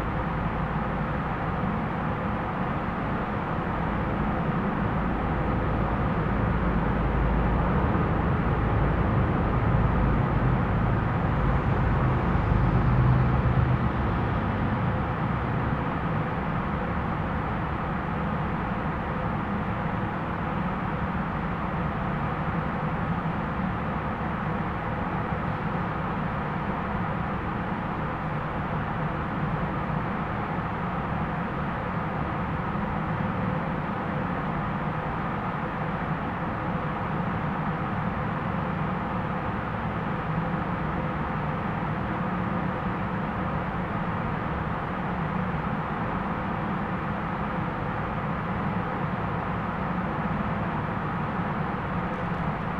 DIA:, Beacon, NY, Verenigde Staten - Richard Serra installation
Sound of the airconditioning recorded from inside a Richard Serra installation. Zoom H4n Pro